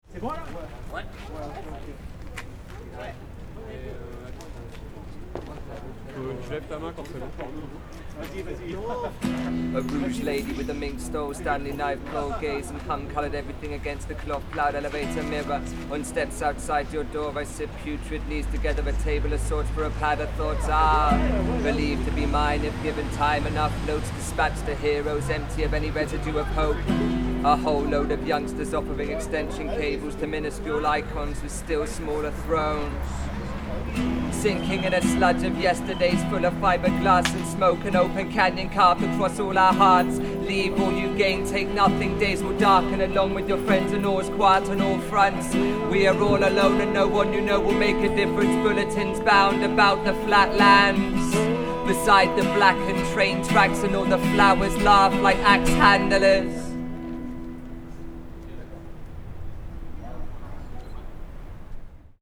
A Band of Buriers / Happening N°1 / part 2